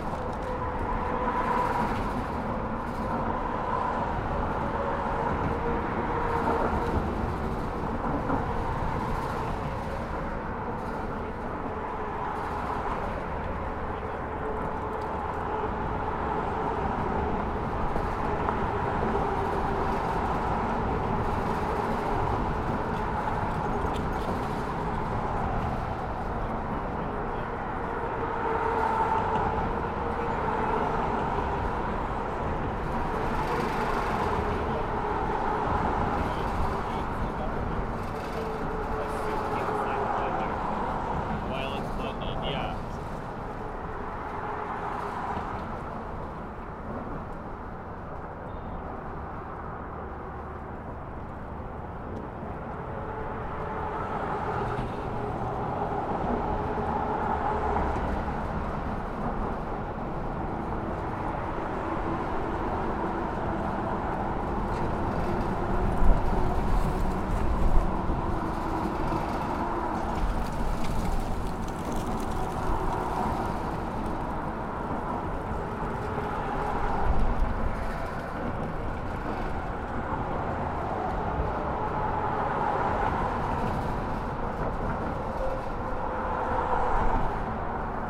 Pulaski Bridge, Long Island City, NY, Verenigde Staten - Bridge traffic
Zoom H4n Pro placed on ground level of bridge